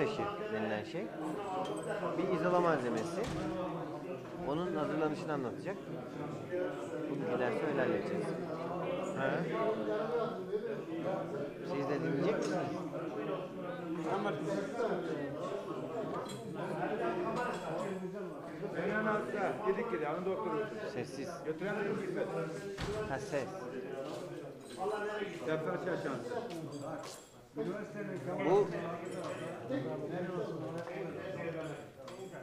Karaot Village, Torbali İzmir / Turkey - Karaot Village, Torbalı İzmir / Turkey
the coffee shop / kahvehane in a small village, the men are chatting and enjoying their tea
Izmir Province, Turkey, 8 February 2011